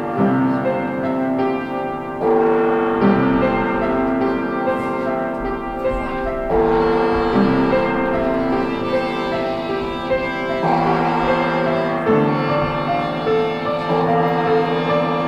Borbeck - Mitte, Essen, Deutschland - essen, traugott weise school, music class
In der Traugott Weise Schule, einer Förderschule mit dem Schwerpunkt geistige Entwicklung - hier in einer Musik Klasse. Der Klang der Combo TWS Kunterbunt bei der Probe eines gemeinsam erarbeiteten Musikstücks.
Inside the Traugott Weise school - a school for special needs - in a music class. The sound of the TWS cpmbo Kunterbunt rehearsing a common music piece.
Projekt - Stadtklang//: Hörorte - topographic field recordings and social ambiences